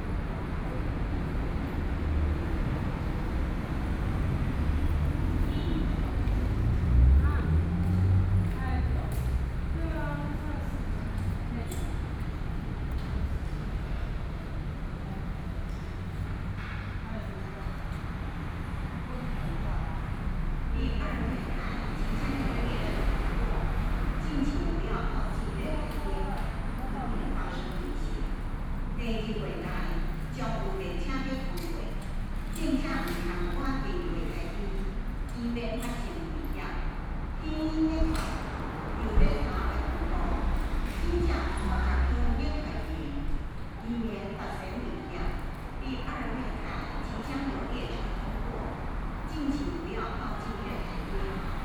Neili Station, Taoyuan - Station hall
Station hall, Broadcast station message, Sony PCM D50 + Soundman OKM II